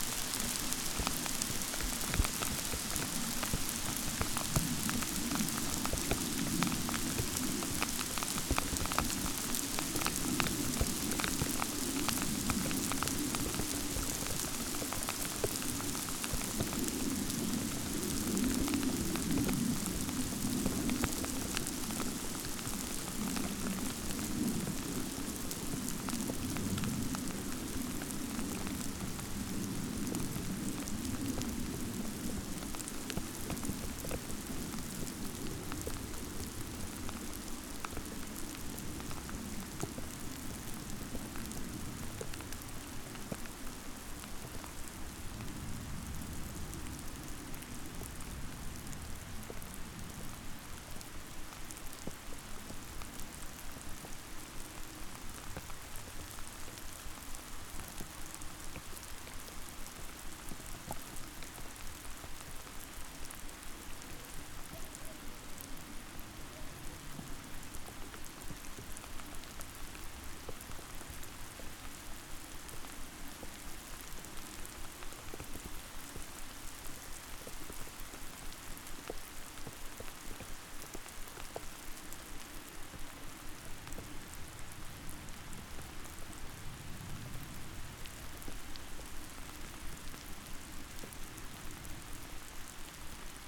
Šlavantas lake, Šlavantai, Lithuania - Hail shower on a frozen lake
Short hail shower on top of the frozen Šlavantas lake. Recorded with ZOOM H5.
Lazdijų rajono savivaldybė, Alytaus apskritis, Lietuva